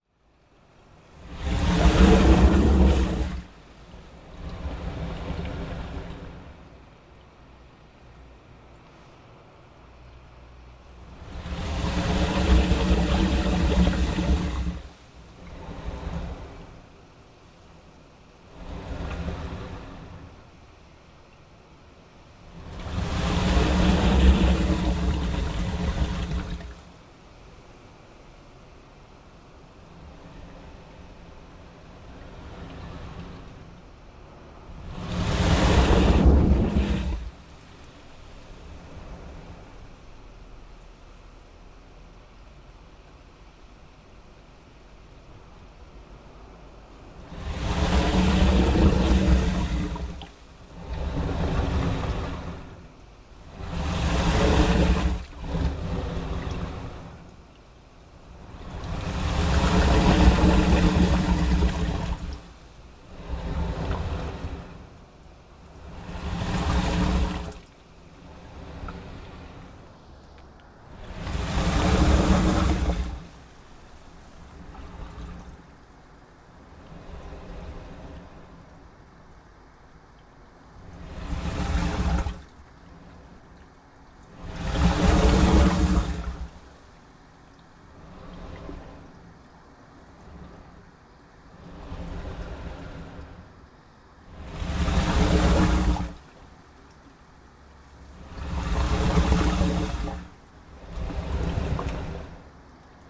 A wharf on Ulleung-do - A Wharf on Ulleung-do
wave action under a crude concrete wharf on a remote island in the East Sea